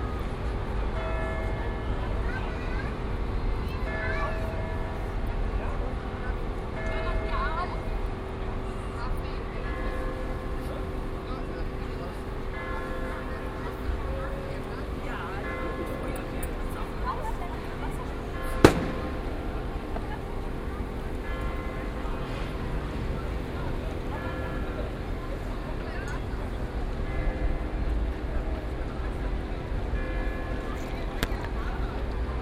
Löhrrondell, square, Koblenz, Deutschland - Löhrrondell 9
Binaural recording of the square. Second day, a saturday, ninth of several recordings to describe the square acoustically. On a bench, children's day, homeless people discussing.